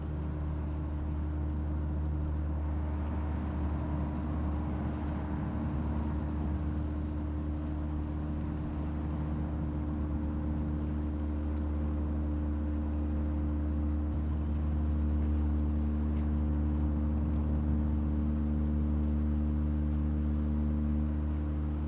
Jablanac-Rab, Ferry
Feery aproach harbour Jablanac
Ličko-Senjska županija, Hrvatska